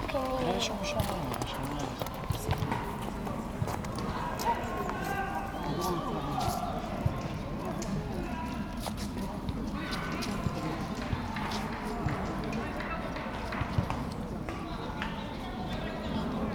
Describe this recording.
Park, Volleyball, Badminton, Birds, Pedestrians. Tascam DR-100 MkIII, int. mics.